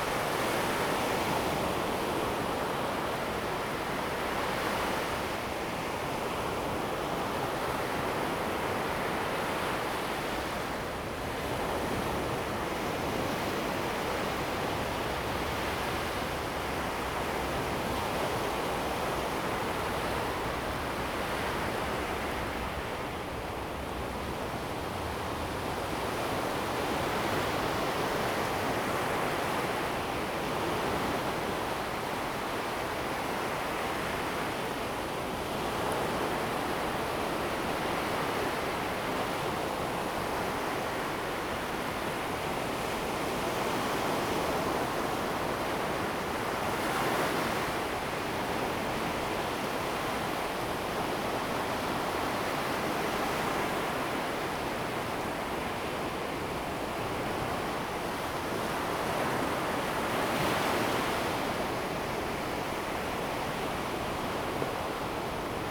永安海濱公園, Xinwu Dist., Taoyuan City - high tide time
on the beach, high tide time, sound of the waves
Zoom H2n MS+XY
26 August, ~14:00